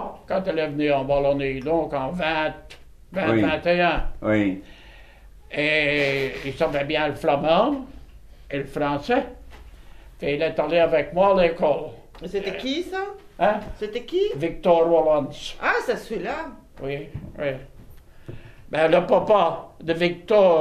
Court-St.-Étienne, Belgique - Old man memories
An old man testimony : Jozef Donckers. He worked on a local paper mill.